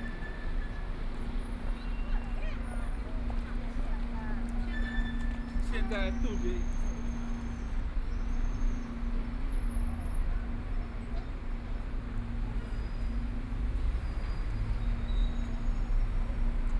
河南中路, Zhabei District - the Street
in the Street, Walking toward the direction of the river bank, traffic sound, Binaural recording, Zoom H6+ Soundman OKM II